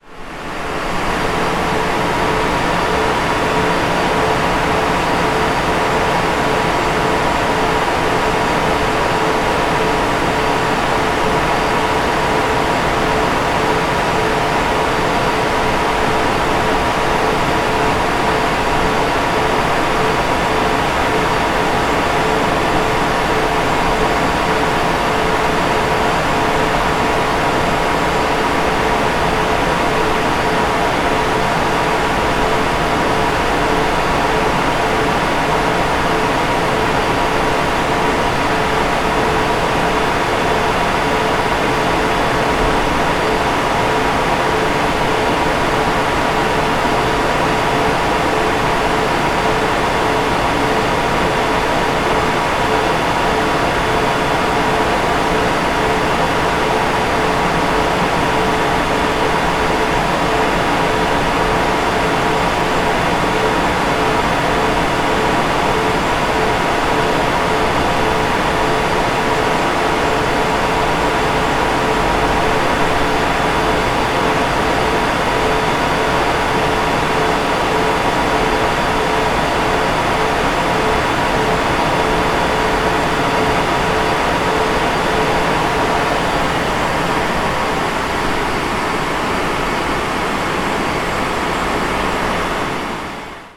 Standing in a server room listening to the fan noise.
Wuppertal, Germany, 1 August